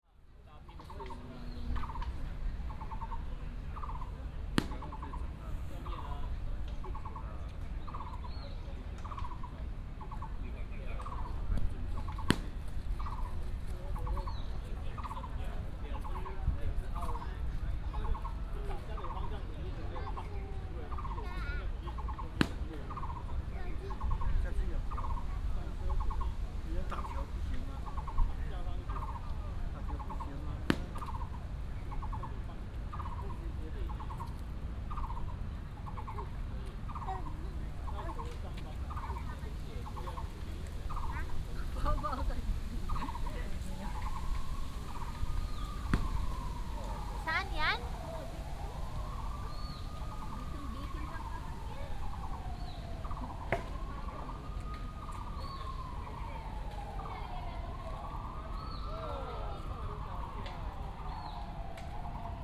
Qingnian Park, Wanhua Dist., Taipei City - in the Park
in the Park, The pupils are practicing against baseball, birds sound, traffic sound
Taipei City, Wanhua District, 水源路199號, 28 April 2017